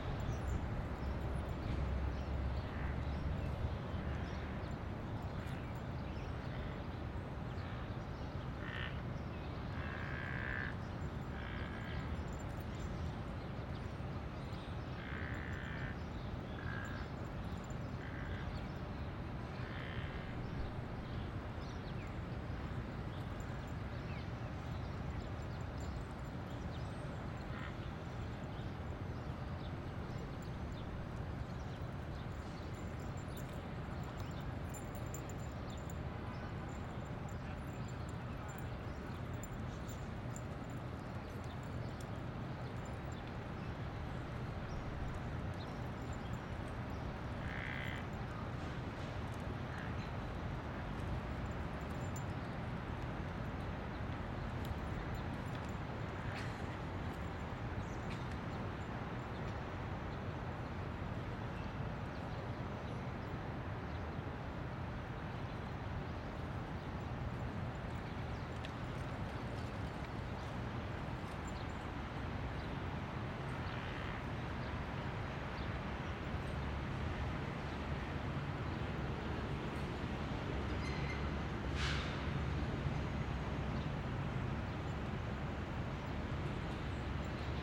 Calm Thursday morning on Stuyvesant Square Park.